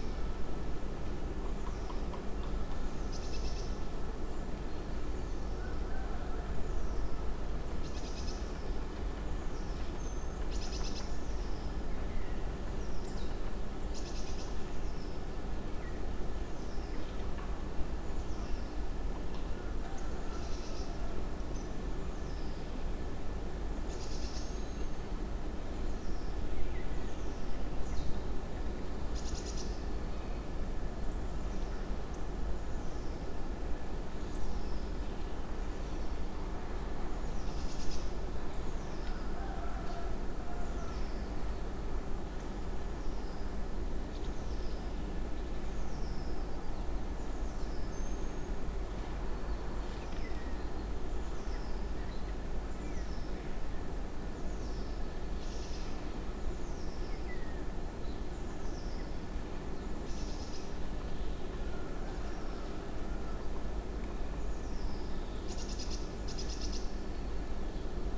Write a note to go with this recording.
Recorded from my window with directional microphone pointing towards the forrest